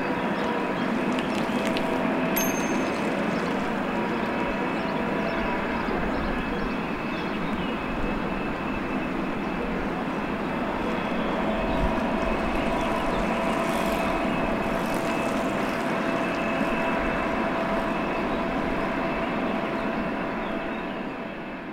Toulouse, Sept Deniers
Sunday morning with a motor and people talking
Toulouse, France